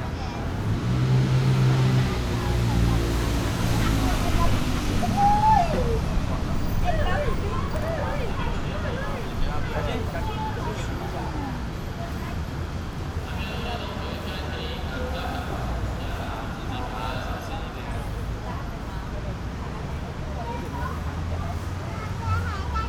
Jian-Guo Elementary School, Kaohsiung City - Parents waiting for children
Parents waiting for students after class, Sony PCM D50